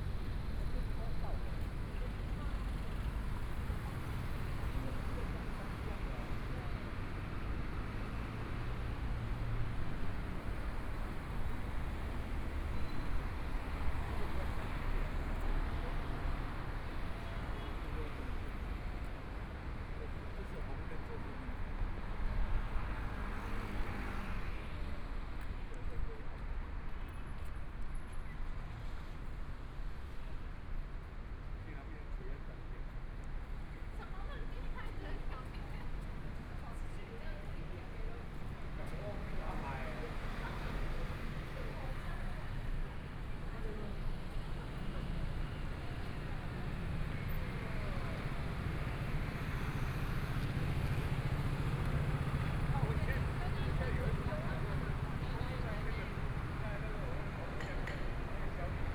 Sec., Chang'an E. Rd., Zhongshan Dist. - soundwalk
walking on the Road, Traffic Sound, Motorcycle Sound, Pedestrians on the road, Various shops voices, Binaural recordings, Zoom H4n+ Soundman OKM II